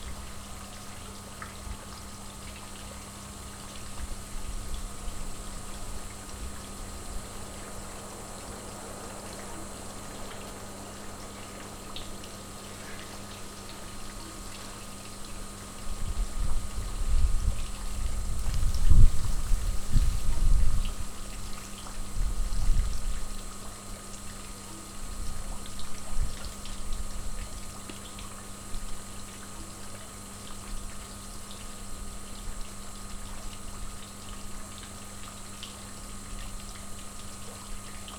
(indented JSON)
{
  "title": "Sasino, summerhouse at Malinowa Road, yard - lazy fountain",
  "date": "2015-08-16 00:40:00",
  "description": "lazy trickle of the fountain and a buzzing pump supplying the flow at the neighboring house.",
  "latitude": "54.76",
  "longitude": "17.74",
  "altitude": "23",
  "timezone": "Europe/Warsaw"
}